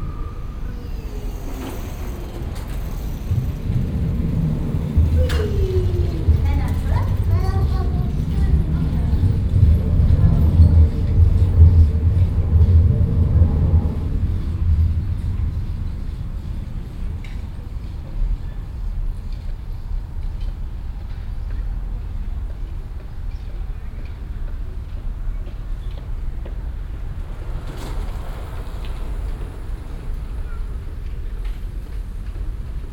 Düsseldorf, Hofgarten, Kö Passage
Mittags in der Kö Passage, Stein Beton hallende Schritte, passierende Fahrradfahrer, das Rauschen des darüber hinwegrollenden Verkehrs.
soundmap nrw: topographic field recordings & social ambiences
Duesseldorf, Germany, 21 August 2008, 09:40